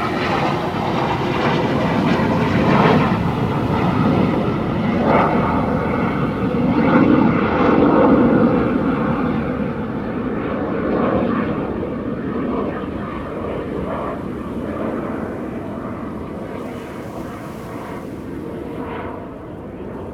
富岡里, Taitung City - in the beach
Sound of the waves, The distant sound of the yacht, Fighter flight through
Zoom H2n MS +XY